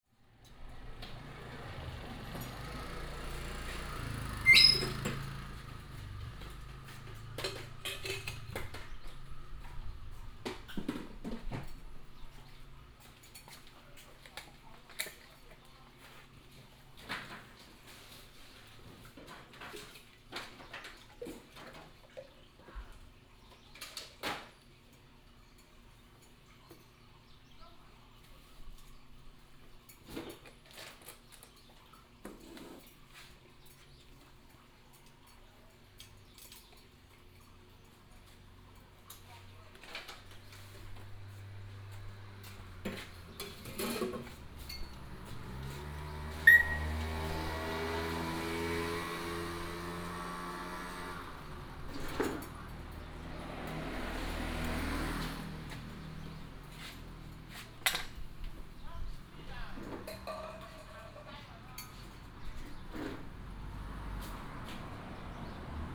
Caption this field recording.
Motorcycle repair shop, Traffic sound, Bird cry, Binaural recordings, Sony PCM D100+ Soundman OKM II